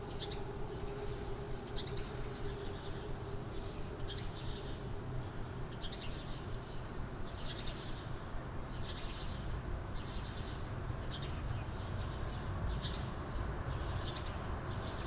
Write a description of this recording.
Sunny morning of February 2020. 3rd Floor. Living room. Berlinale period of time. Recorded on Zoom H5 built-in X/Y stereo microphone.